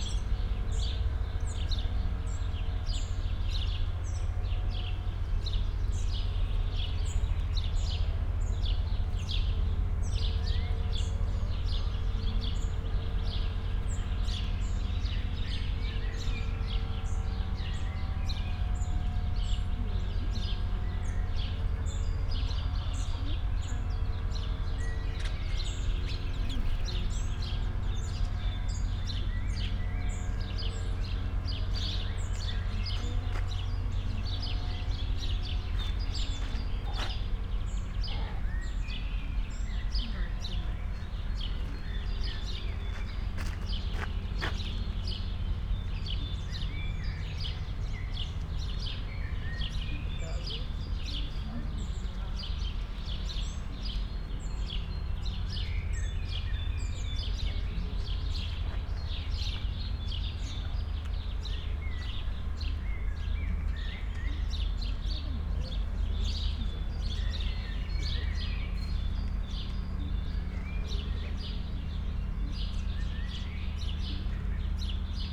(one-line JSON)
{"title": "Pfaueninselchaussee, Berlin - caged and free voices", "date": "2013-05-23 13:51:00", "description": "sonic scape close to the cage, inside peacocks, chickens, duck, steps around and spoken words, free birds", "latitude": "52.43", "longitude": "13.13", "altitude": "48", "timezone": "Europe/Berlin"}